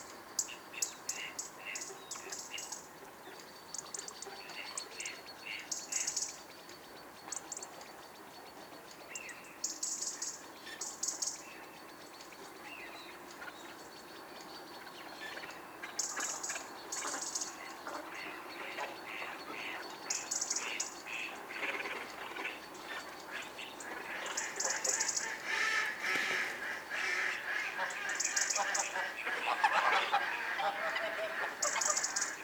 Chem. des Ronferons, Merville-Franceville-Plage, France - Gooses and ducks
Gooses and ducks, Zoom H6
Normandie, France métropolitaine, France, 17 January 2022